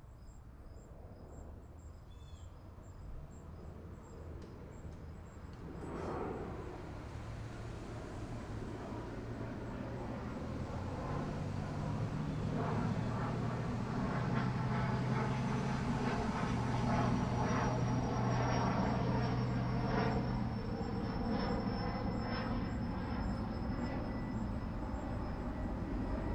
43737 Dorisa Ct
Backyard sounds consisting of crickets, birds, traffic and airplane.
Northville, MI, USA, July 2010